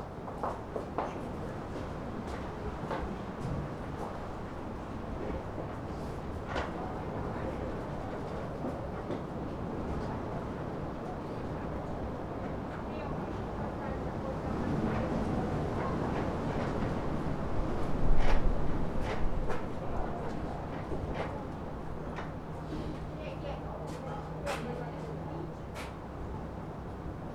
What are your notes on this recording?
people come to concert to local cultural center